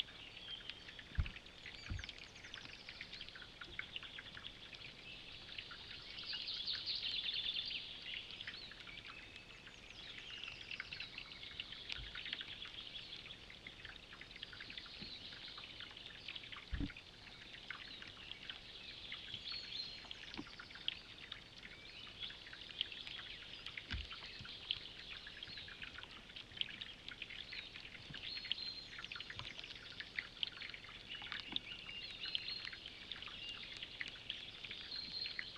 Lukniai, Lithuania, on a tiny ice
two hydrophones placed on a tiny ice
30 March 2017, ~10:00